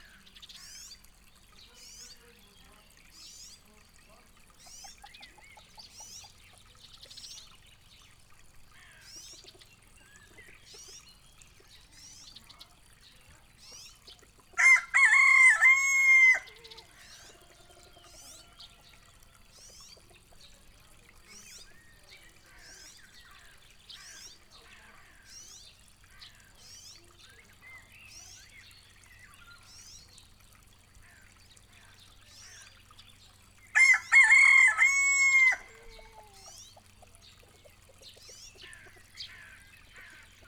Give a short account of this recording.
a cock, his distant colleague, flowing water, a man is testing his chainsaw, then the sound of a plane fills the valley. (SD702 AT BP4025)